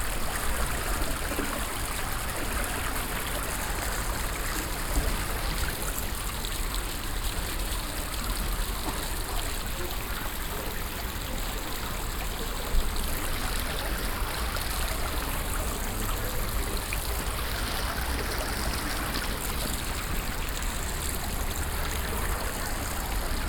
Wochenmarkt, Hamm, Germany - Green Market April 2020
walking from West to East along the stalls, starting at the fountain up to beginning of Oststr, ; fewer stalls, fewer shoppers than other wise, every one waiting patiently in queues, chatting along…
i'm placing this recording here for a bit of audio comparison... even though my stroll in April 2020 is taking place a little closer round the church; during Christmas season the green market shifts because of the Christmas Market being set up round the church.